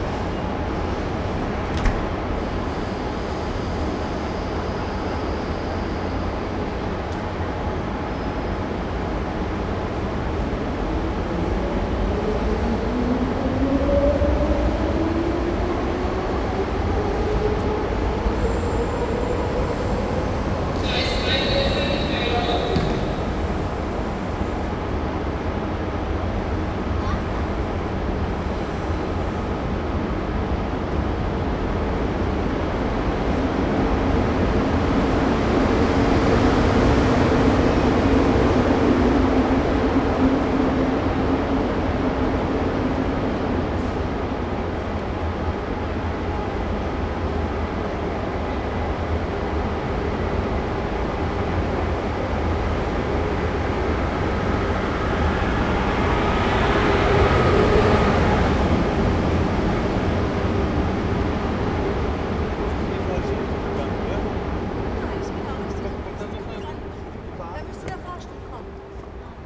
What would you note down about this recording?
hamburg dammtor station, train to berlin arriving at track 4